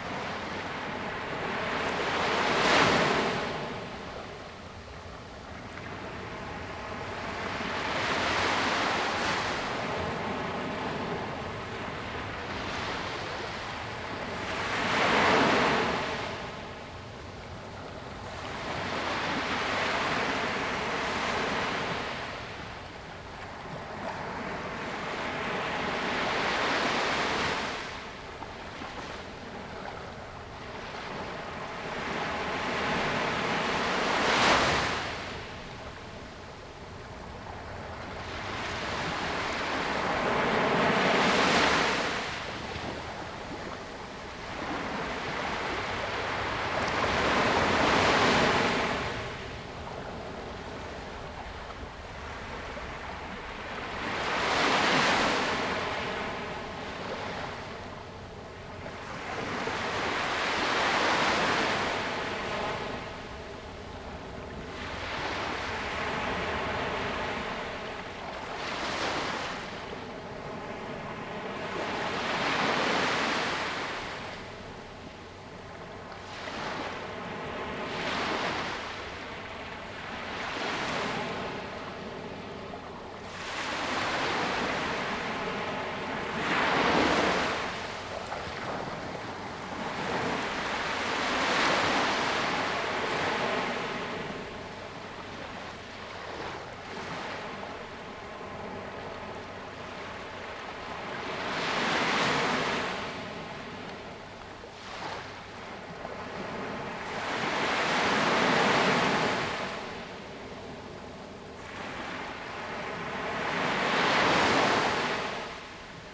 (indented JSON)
{
  "title": "六塊厝, Tamsui Dist., New Taipei City - the waves",
  "date": "2016-04-16 07:16:00",
  "description": "Aircraft flying through, Sound of the waves\nZoom H2n MS+XY",
  "latitude": "25.24",
  "longitude": "121.45",
  "altitude": "5",
  "timezone": "Asia/Taipei"
}